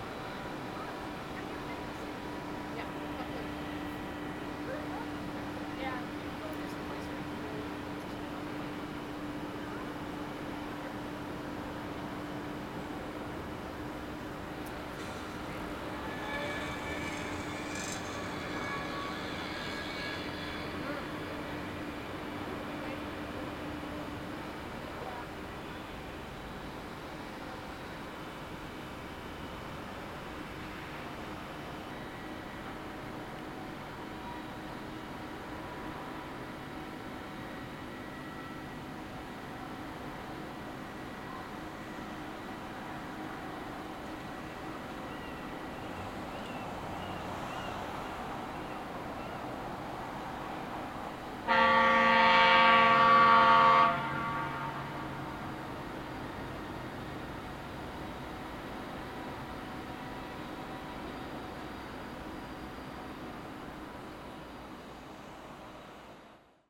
vancouver, waterfront road, can in the wind at harbour site
while waiting on the leaving signal of the sea bus - wind plays with a coke can pushing it down the steps of a stairway.
soundmap international
social ambiences/ listen to the people - in & outdoor nearfield recordings